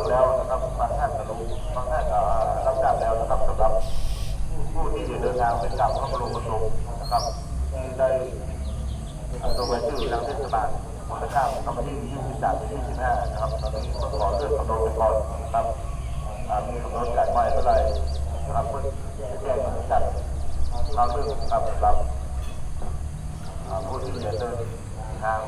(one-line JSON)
{"title": "Tambon Hang Dong, Amphoe Hot, Chang Wat Chiang Mai, Thailand - Fahrender Händler Chom Thong bei Puh Anna", "date": "2017-08-21 10:00:00", "description": "A pedler driving by in some distance from Puh Annas guesthouse, resonating nicely in the natural sounds of the surrounding.", "latitude": "18.19", "longitude": "98.61", "altitude": "271", "timezone": "Asia/Bangkok"}